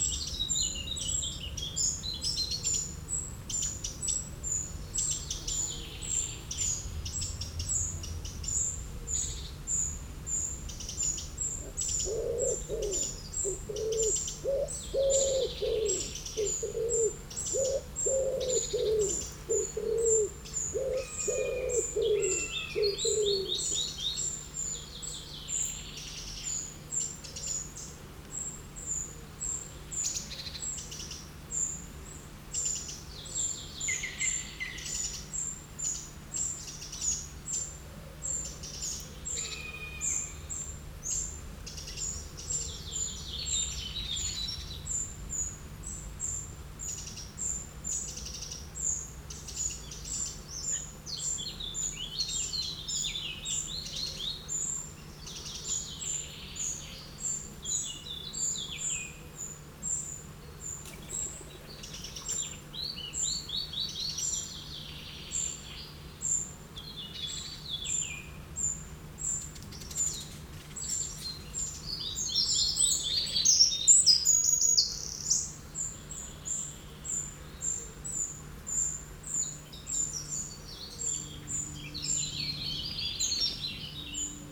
In the Brabant-Wallon rural landscape, the irritating shouts of two Spotted Flycatchers, discussing between them. At the beginning, there's a few sound of agricultural works. After, the birds you can hear are [french name and english name] :
Gobemouche gris (Spotted Flycatcher) - tsii tsii tsii.
Troglodyte mignon (Eurasian Wren) - tac tac tac tac
Pic vert (European Green Woodpecker)
Buse variable (Common Buzzard) - yerk, yeerk.
It's great to listen the Common Buzzard, as it's not so easy to record it, it's a very moving bird of prey.